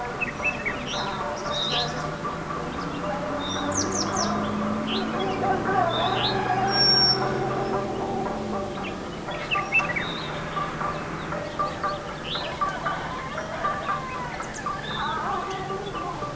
Nullatanni, Munnar, Kerala, India - dawn in Munnar - over the valley 2
dawn in Munnar - over the valley 2